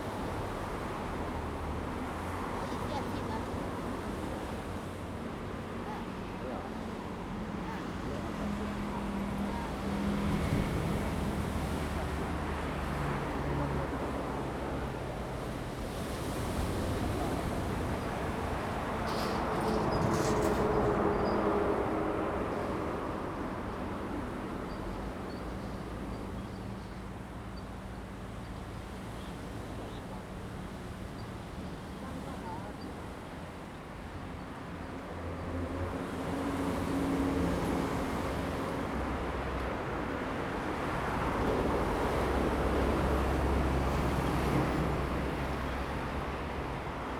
Jilin Rd., Taitung City - On the embankment
On the embankment, Traffic Sound, In the nearby fishing port, The weather is very hot
Zoom H2n MS +XY
Taitung County, Taitung City, 都蘭林場東部駕訓班